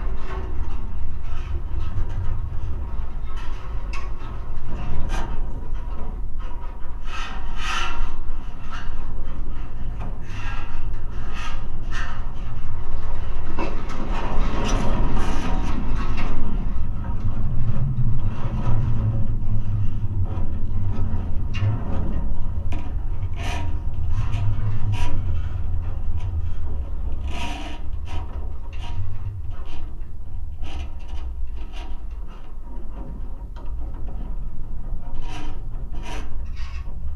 a pair of contact microphones and geopgone on a single fence wire in a field
Utenos apskritis, Lietuva, December 2020